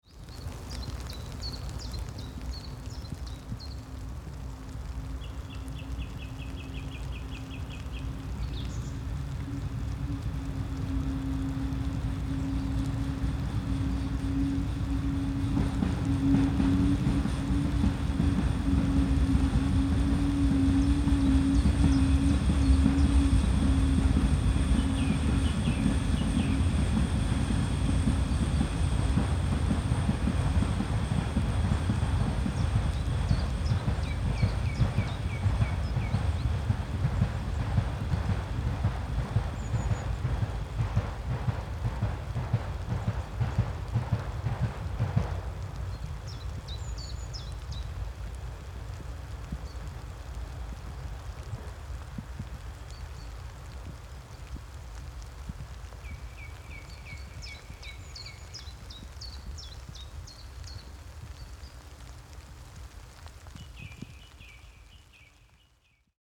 near Allrath, Germany - Train, rain, mid hill springtime birds
Train carrying brown coal from the mine to the power stations run on a specially strengthened track that can take the weight. Birds heard include chiffchaff and song thrush.